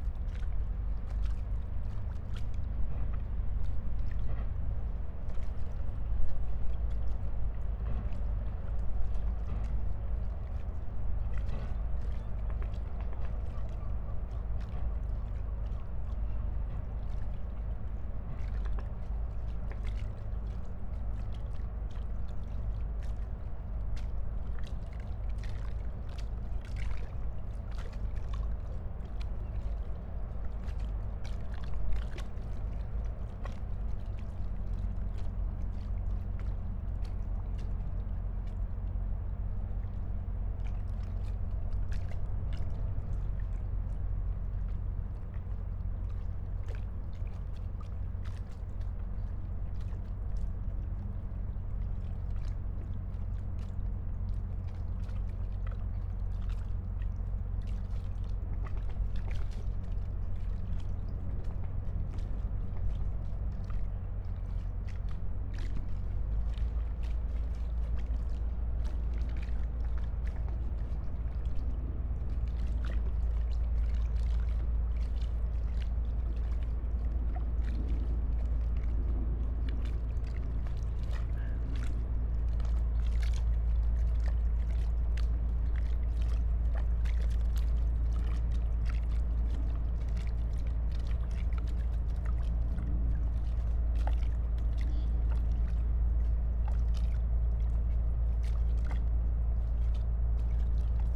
place revisited on a Saturday afternoon in winter. Coal frighters at work, a woodpecker in the tree, gentle waves of the river Spree.
(SD702, MKH8020)
14 January 2017, 13:40